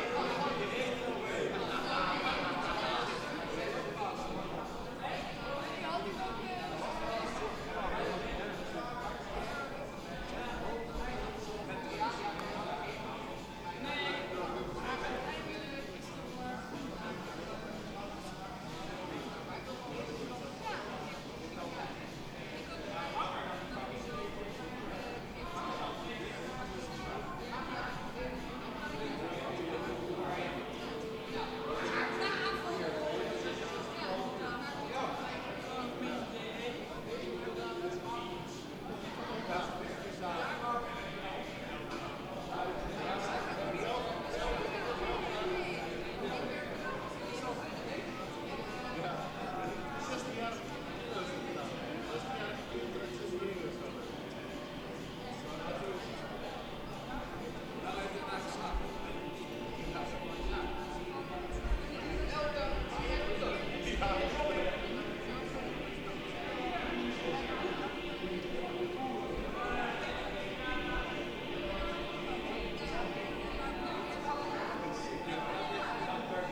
The sound of a BBQ party my neighbors had last summer. Recorded from my bedroom window.
Recorded with Zoom H2 internal mics.